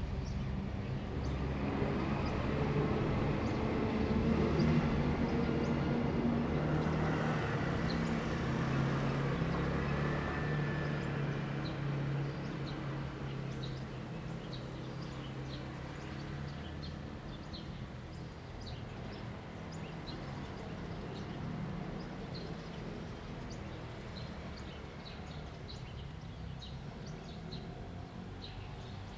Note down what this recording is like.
In the temple square, Birds singing, Traffic Sound, Zoom H2n MS +XY